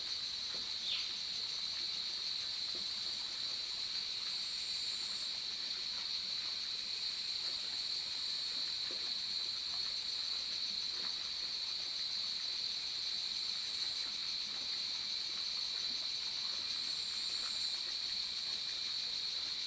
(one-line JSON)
{"title": "華龍巷, 魚池鄉五城村, Nantou County - Morning woods", "date": "2016-06-08 06:47:00", "description": "Cicadas cry, Bird sounds, Small streams\nZoom H2n MS+XY", "latitude": "23.92", "longitude": "120.88", "altitude": "747", "timezone": "Asia/Taipei"}